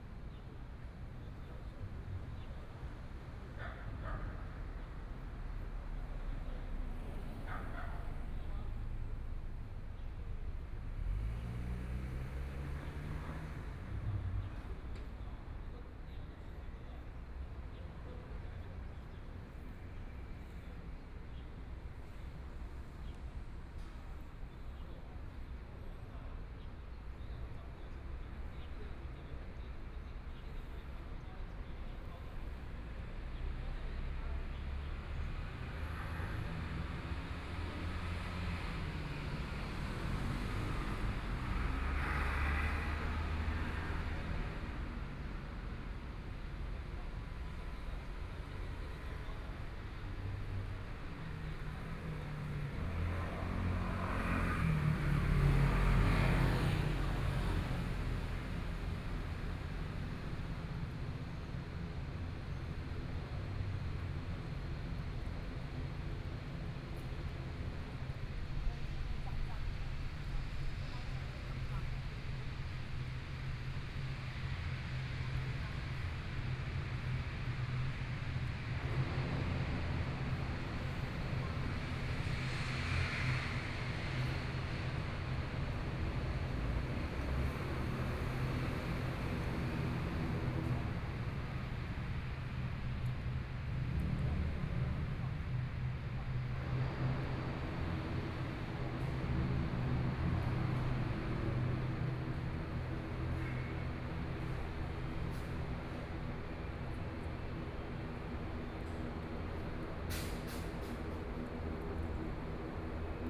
下埤公園, Taipei City - Sitting in the park
Near the airport noise, Traffic Sound, Dogs barking
Zhongshan District, Taipei City, Taiwan, 3 April 2014, ~13:00